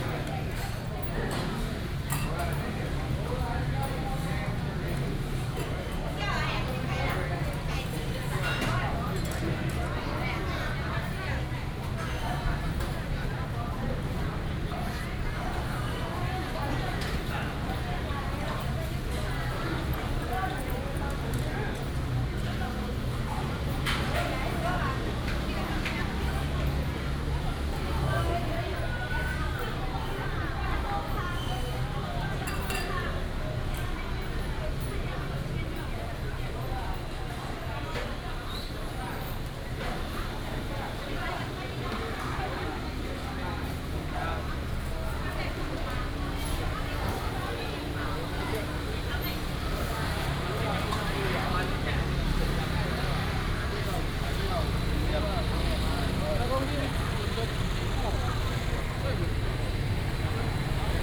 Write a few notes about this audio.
Walking in the Public retail market, lunar New Year, Traffic sound, Bird sounds, Binaural recordings, Sony PCM D100+ Soundman OKM II